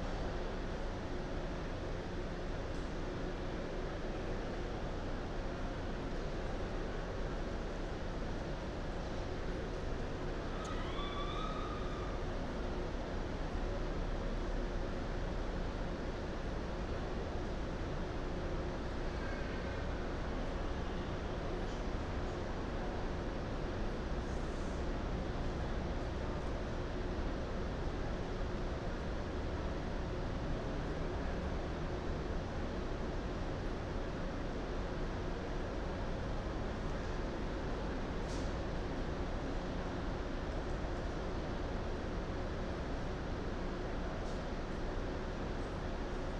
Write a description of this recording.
Zoom H6 + 2 Earsight mics. Bus central station and there... a beautiful atmosphere is created under the large roof. Taken at a quiet time with little traffic.